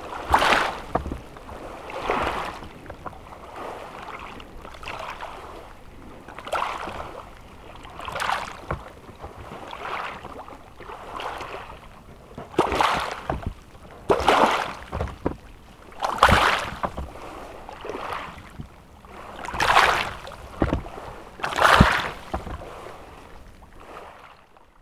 promenade au fil de l'eau, Brison-Saint-Innocent, France - Vaguelettes
Au bord de l'eau, près d'un morceau de bois flotté mis en mouvement par la force des vagues.
Auvergne-Rhône-Alpes, France métropolitaine, France, 6 September 2022